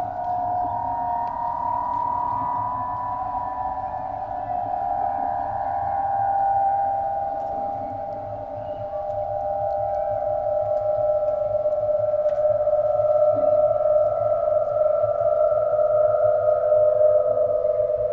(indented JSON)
{
  "title": "Tempelhofer Feld, Berlin, Deutschland - Berlin Sonic Places: Max Eastley, aeolian harps",
  "date": "2012-08-18 15:00:00",
  "description": "Max Eastley on aeolian harps. The project Klang Orte Berlin/Berlin Sonic Places was initiated by Peter Cusack in the frame of his Residency at The DAAD Artists-in-Berlin Program and explores our relationship with and the importance of sound in the urban context.",
  "latitude": "52.48",
  "longitude": "13.42",
  "altitude": "48",
  "timezone": "Europe/Berlin"
}